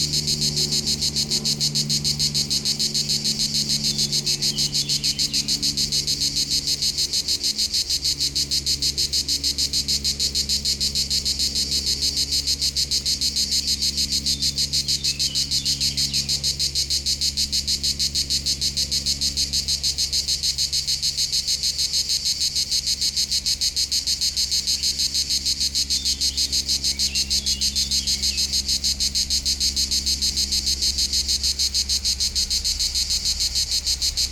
Rue De BOVERON, Vions, France - Rythmique des cigales
Par une chaude journée d'été les cigales locales sont en pleine activité au pied du Molard de Vions .
Auvergne-Rhône-Alpes, France métropolitaine, France, 10 July, ~12:00